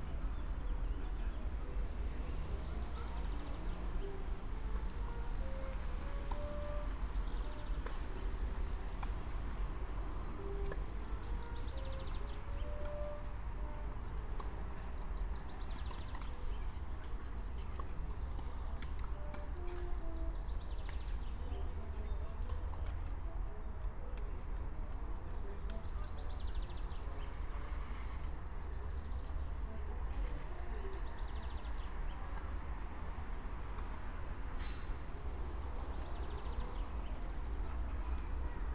Brno, Lužánky - park ambience
08:23 Brno, Lužánky
(remote microphone: AOM5024/ IQAudio/ RasPi2)
Jihomoravský kraj, Jihovýchod, Česko, 16 June 2021